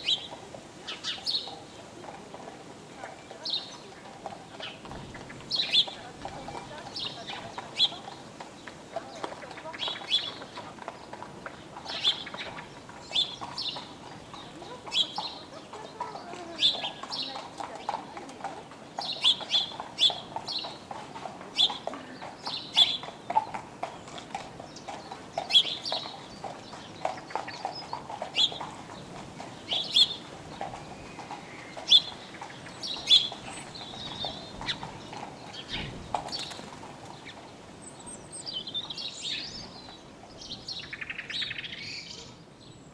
{"title": "Chemin des Ronferons, Merville-Franceville-Plage, France - Birds & horses", "date": "2020-03-28 12:02:00", "description": "Birds singing and two horses in a little road, during covid-19 pandemic, Zoom H6", "latitude": "49.27", "longitude": "-0.18", "altitude": "4", "timezone": "Europe/Paris"}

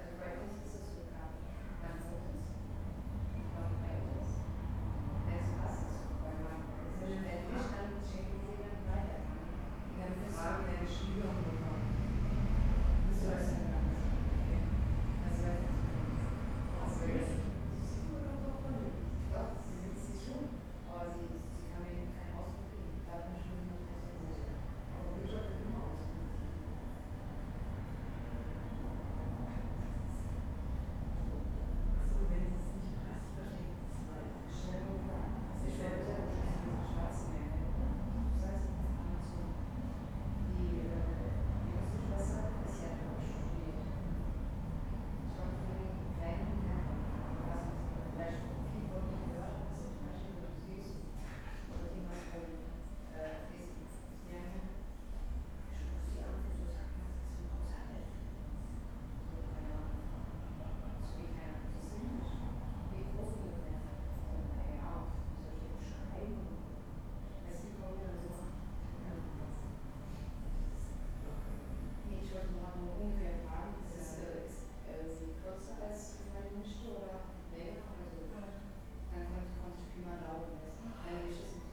{"title": "berlin, friedelstraße: arztpraxis - the city, the country & me: doctor's office", "date": "2011-04-20 11:43:00", "description": "almost empty waiting room of a doctor's office, receptionists talking\nthe city, the country & me: april 20, 2011", "latitude": "52.49", "longitude": "13.43", "altitude": "45", "timezone": "Europe/Berlin"}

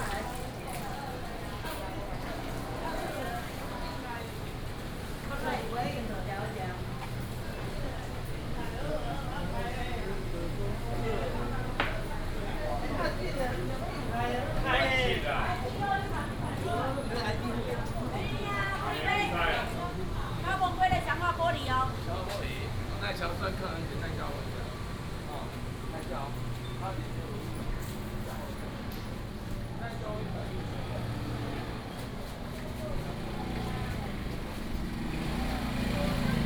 {"title": "新埔市場, Banqiao Dist., New Taipei City - Walking through the market", "date": "2015-07-29 16:17:00", "description": "End Time for business, Traffic Sound, Walking through the market", "latitude": "25.02", "longitude": "121.47", "altitude": "19", "timezone": "Asia/Taipei"}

